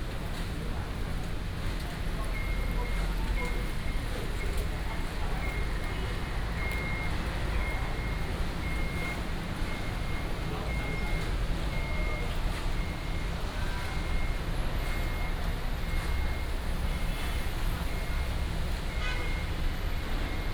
Ren 2nd Rd., Ren’ai Dist., Keelung City - bus station
Rainy day, bus station, Parking construction sound, Traffic sound, Binaural recordings, Sony PCM D100+ Soundman OKM II